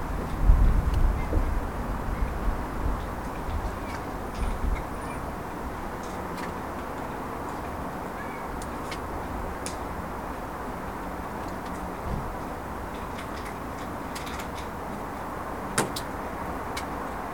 Swaythling, Southampton, UK - 032 At Night